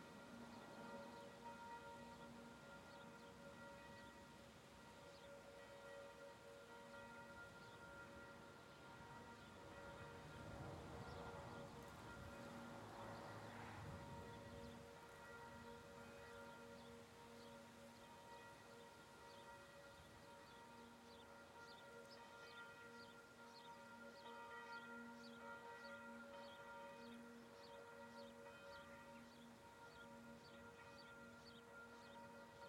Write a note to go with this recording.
Atmosphere village, Vendée very quiet, bells in the distance, wind_in_the leaves, by F Fayard - PostProdChahut, Sound Device 633, MS Neuman KM 140-KM120